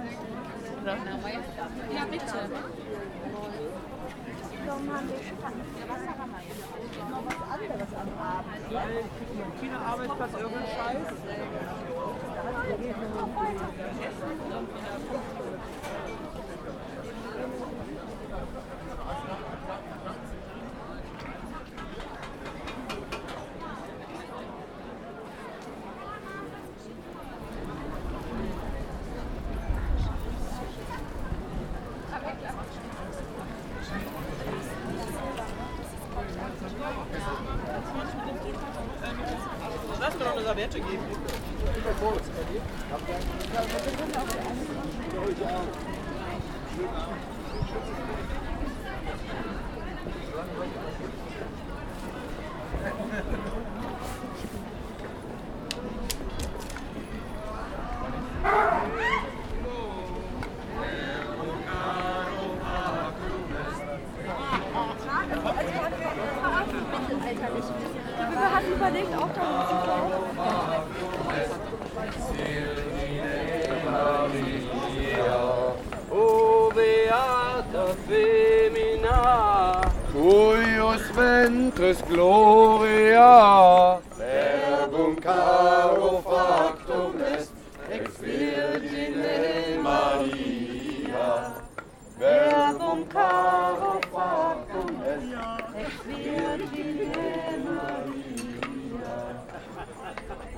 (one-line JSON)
{"title": "Siegburg, Deutschland - Rundgang über den mittelalterlichen Weihnachtsmarkt / Stroll through the medieval Christmas market", "date": "2014-12-19 19:40:00", "description": "Ein Rundgang über den mittelalterlichen Weihnachtsmarkt. Stimmen, Handwerkergeräusche, Wasserflöten, zum Schluss ein lateinischer Gesang, der das Ende des Marktes ankündigt.\nStroll through the medieval Christmas market. Voices, craft noise, water flutes, finally a Latin song that announces the end of the market.", "latitude": "50.80", "longitude": "7.21", "altitude": "67", "timezone": "Europe/Berlin"}